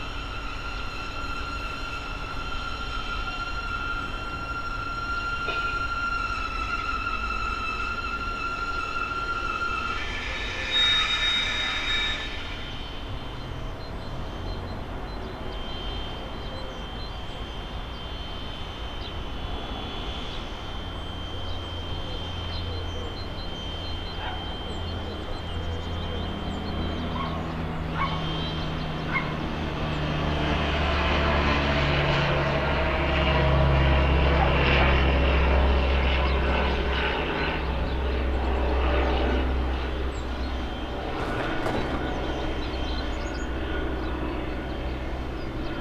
Poznan, balcony - grinder
moan of a grinder operating on a deck of a semi-detached house. plane flies over the building. (sony d50)
Poznan, Poland, April 2016